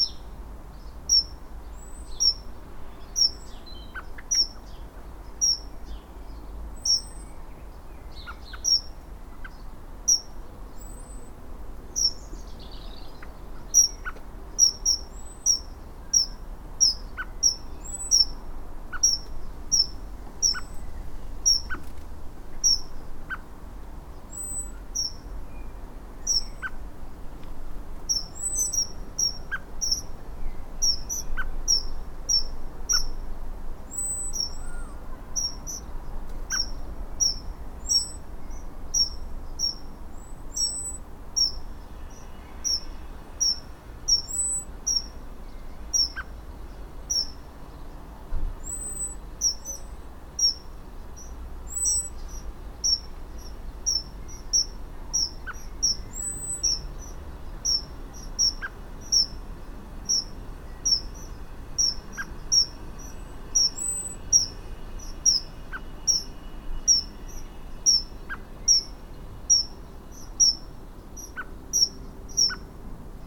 A walnut tree, Katesgrove, Reading, Reading, UK - Sparrow in the Walnut tree
This year there are many sparrows nesting in the roof and in the garden. Their insistent call has really defined the texture of this spring and summer, a constant sonic presence in the garden. They especially like to sing in a nearby walnut tree but also in the tree which is next to this in the neighbouring garden. I strapped my recorder into the tree one fine afternoon to document these special sounds. You can also hear the red kites and the crows that live in our neighbourhood. I really love these sounds as a kind of foreground for the background sounds of where we live - the vague and omnipresent traffic bass; the deep blurry presence of planes in the sky; and the soughing of the wind through all the close together suburban gardens... you can hear blackbirds too. Sorry it's a bit peaky in places... the sparrow got quite close to the recorder I think. Maybe he wants to be a rockstar of aporee.
12 May 2015